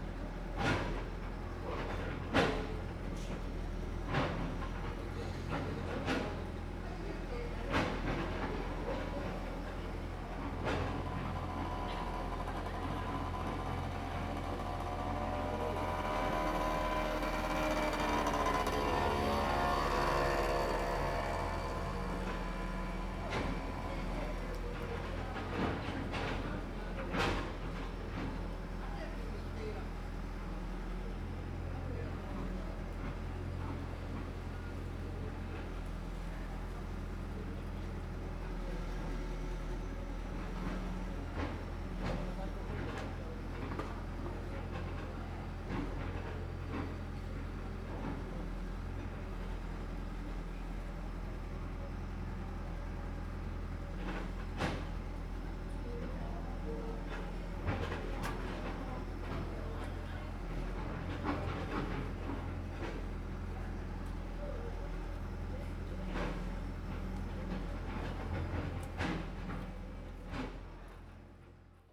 南福村, Hsiao Liouciou Island - Small village
Small village, Traffic Sound, Sound Construction
Zoom H2n MS+XY